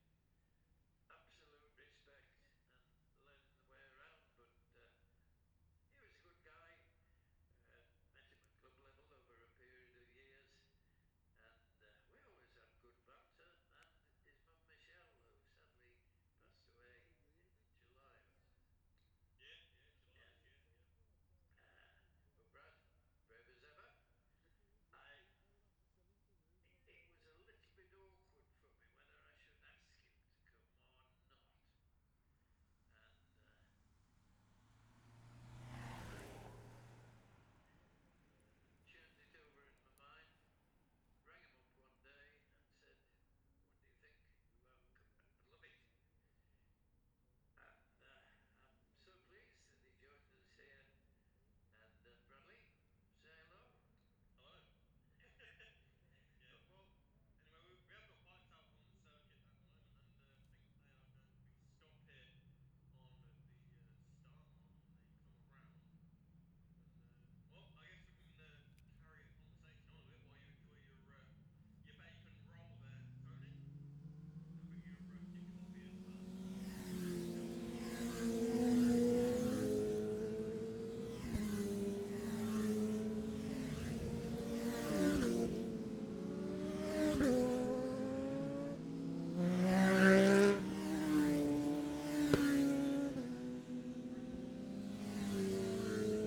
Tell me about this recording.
the steve henshaw gold cup 2022 ... 600 group one practice ... dpa 4060s clipped to bag to zoom h5 ... red-flagged then immediate start ...